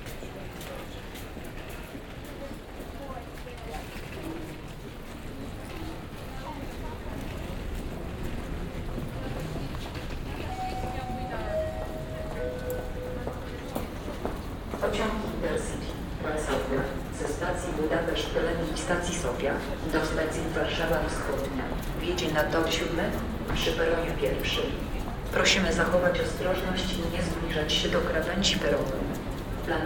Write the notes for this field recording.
Binaural recording of Central Warsaw railway station hallways with announcements at the end. Recorded with Soundman OKM + Zoom H2n